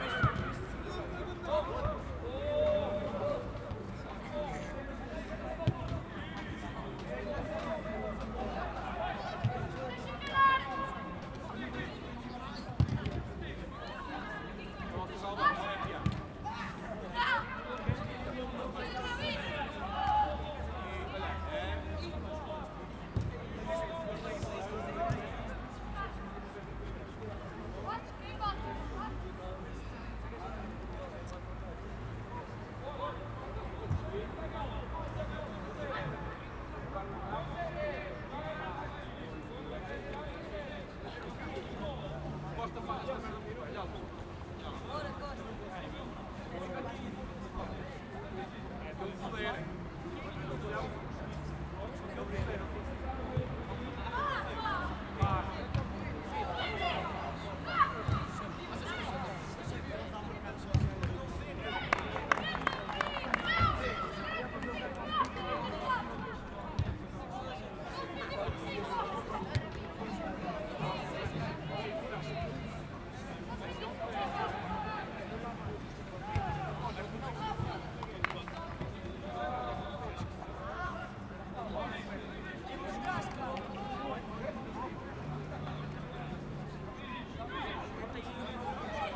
Santa Cruz Sport Field.
Zoom H4n.
Coimbra, Portugal, 11 January